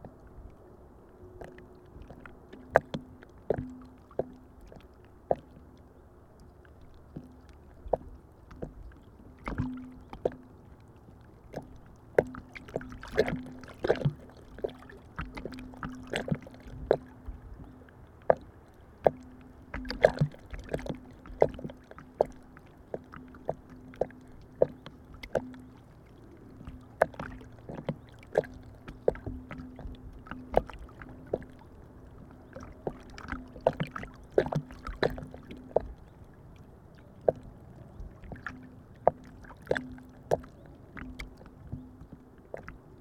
3 channell recording: a pair of small omnis between stones at lakeshore and geophone on the biggest stone